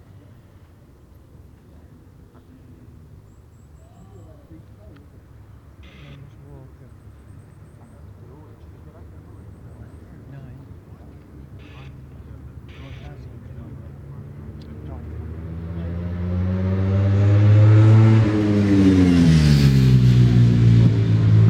Brands Hatch GP Circuit, West Kingsdown, Longfield, UK - WSB 2003 ... superbike qualifying ...
world superbikes 2003 ... superbike qualifying ... one point stereo mic to minidisk ... time approx ...